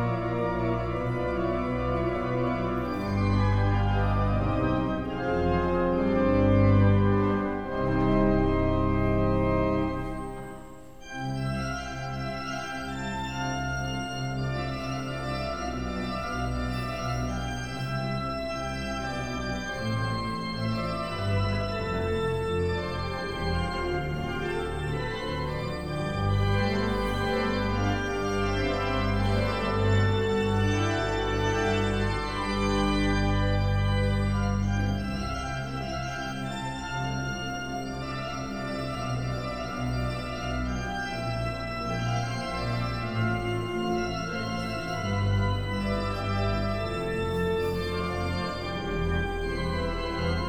Święta Lipka, Poland, concert in church
Church of Our Dear Lady of Święta Lipka, one of the most important examples of Baroque architecture in modern Poland. Also known for its grand organ.
12 August 2014, 10:40